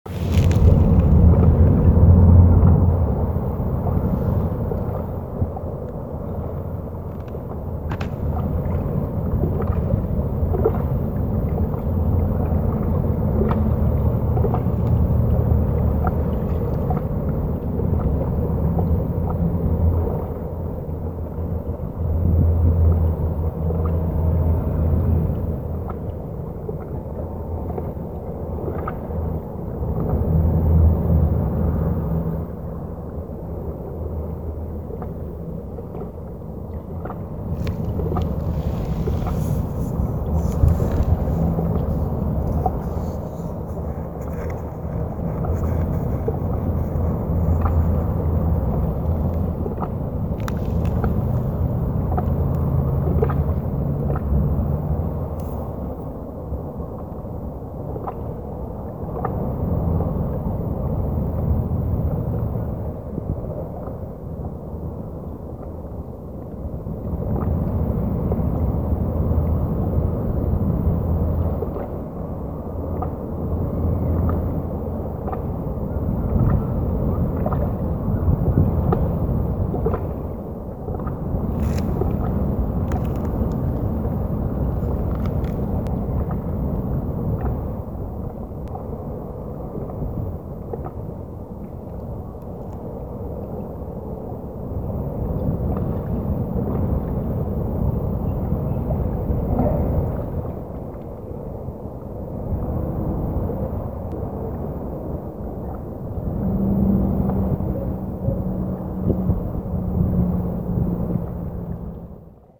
Henley Marine Dr, Rodd Point NSW, Australia - Rodd Point
Waves lapping and traffic in the background
25 September 2017, 12:00